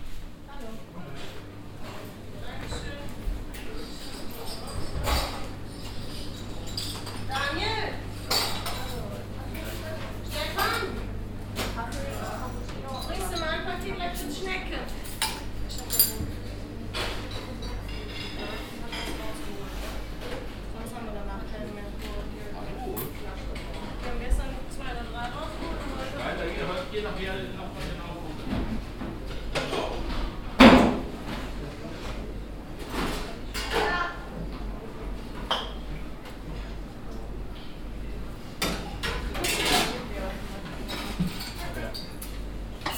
urfttalsperre, tourist restaurant
a tourist restaurant outdoor and indoar at the biggest european earh damm
soundmap nrw - social ambiences and topographic field recordings
Schleiden, Germany, 28 June 2010